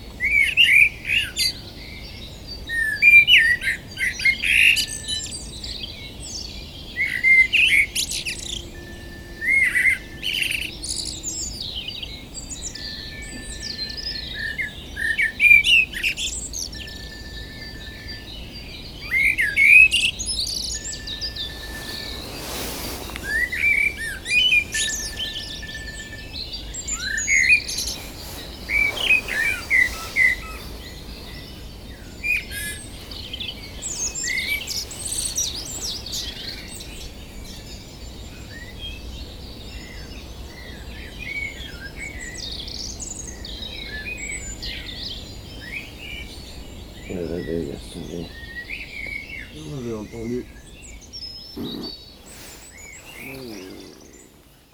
We are sleeping outside, in the garden of an abandoned house, partially destroyed because of a large collapse into the underground mine. Before waking up, I recorded the dawn chorus. At the end of the recording, the clock is ringing, it's time to wake up.
Saint-Martin-le-Vinoux, France, 30 March